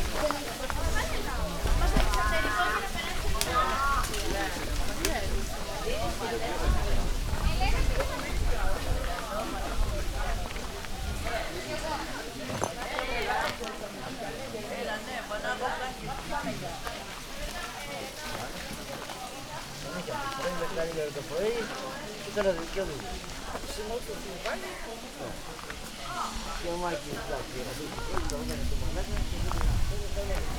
Crete, Samaria Gorge, rest area - hikers resting
active place, lots of hikers resting, having lunch, kids playing, a hornet trapped in a plastic bottle.
Chania, Greece, September 2012